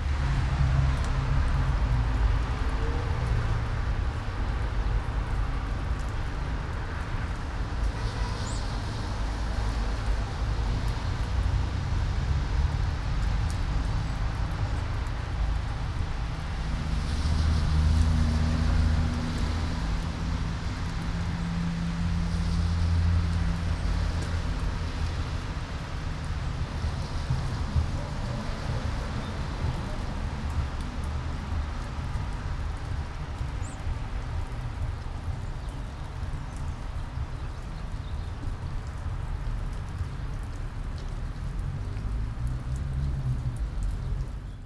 all the mornings of the ... - apr 2 2013 tue